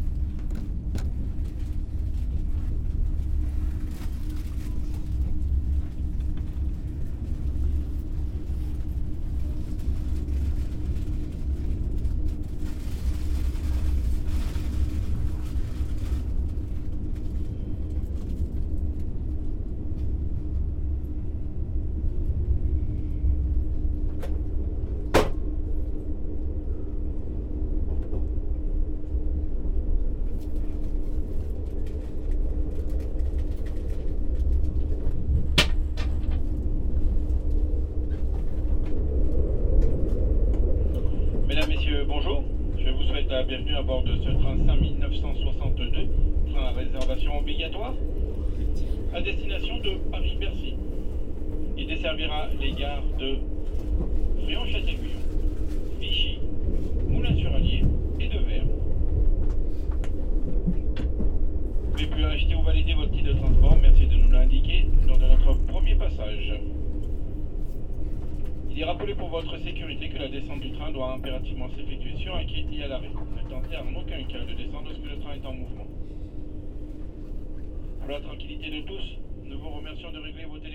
Clermont-Ferrand, France - Clermont-Ferrand station
Taking the train in the Clermont-Ferrand station, on a quiet thuesday morning.
2 May, ~08:00